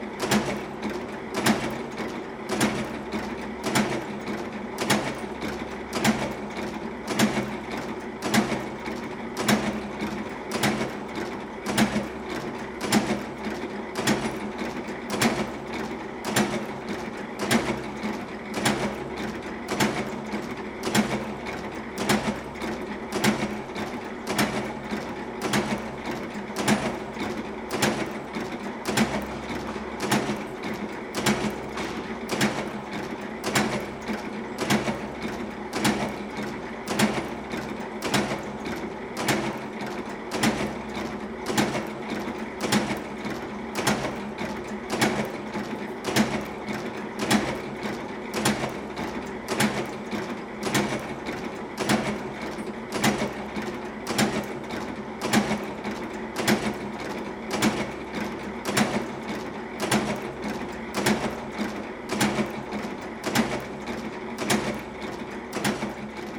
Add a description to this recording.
werkhalle - kettenherstellung - maschine 05, aufnahme mit direktmikrophonie stereo, soundmap nrw - social ambiences - sound in public spaces - in & outdoor nearfield recordings